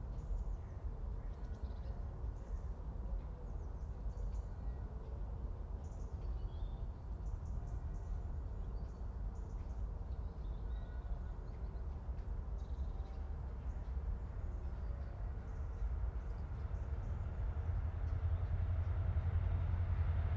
Rain, trains, clangy bells, autumn robin, ravens, stream from the Schöneberger Südgelände nature reserve, Berlin, Germany - Clangy bells, an autumn robin sings, fast train, distant helicopter
Nearer clangier bells begin. A distant robin sings – nice to hear in the cold autumn. A train passes at speed joined by a droning helicopter.